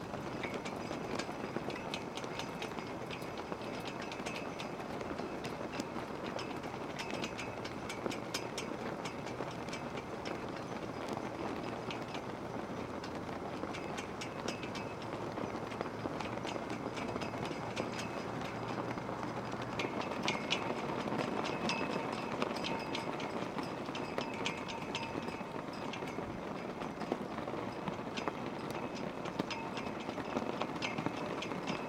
Geunhwa-dong, Chuncheon-si, Gangwon-do, South Korea - at the flagpoles
There is a line of flagpoles at the Korean War Memorial in Chuncheon. This recording was made in association with the early winter wind, and using 2 contact microphones and the external stereo mics of a sony PCM-10 recorder.
1 November 2014, 12:00